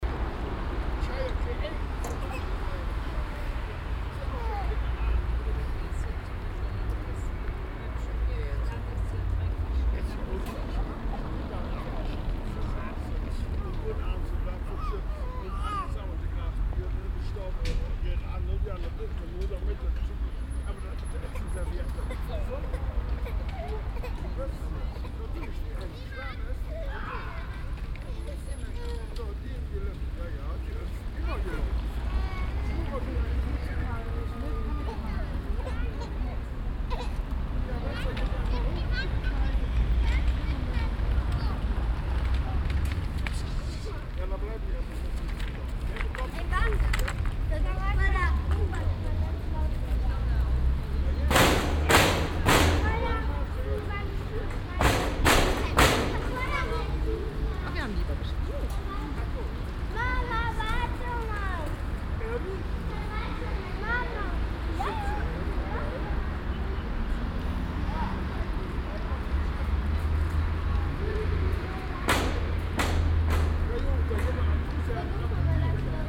cologne, neusserstrasse, agneskirche, platz - koeln, neusserstrasse, agneskirche, platz
parkbankgespräche, kinder hüpfen auf abdeckung zu u-bahnschacht
project: social ambiences/ listen to the people - in & outdoor nearfield recordings